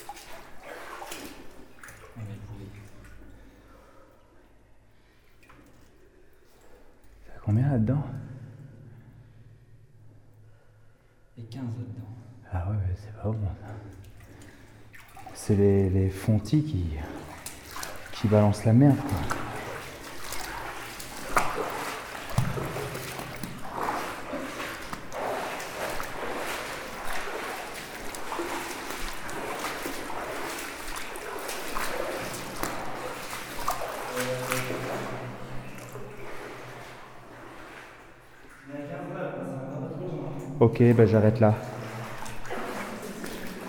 Moyeuvre-Grande, France - Asphyxiant tunnel
In the underground iron mine of Moyeuvre-Grande, walking towards the flooded part of the mine. There's a very-very strong lack of oxygen (16,4% to 15%). It's dangerous and you can hear me walking like a galley slave, with high difficulties to breathe. We know that we have no more than 10 minuts to verify the entrance of the called Delivrance tunnel, just because of the lack of oxygen. We encountered a defeat because we would need a boat. But a boat would mean more than 20 minuts, it's impossible, death would be near. The bip you hear is the oxygen detector and the level is so dreadful that we made a shut-down on the automatical alarm - it would be shouting everytime. It was, for sure, a critical incursion in this part of the mine. Finally, it took us 12 minuts to verify the impossibility to go beyond the asphyxiant gas district. Recorded binaural in a extremely harsh period, sorry that this recording is not perfect.
10 December 2016